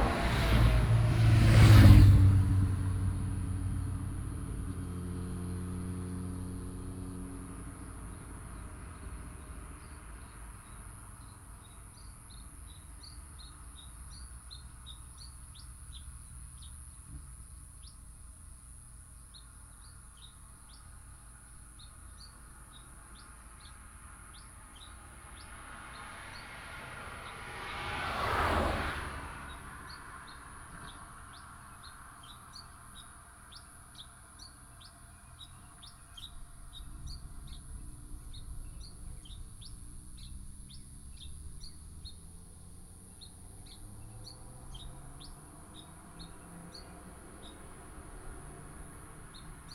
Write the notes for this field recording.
In the bus station, Traffic Sound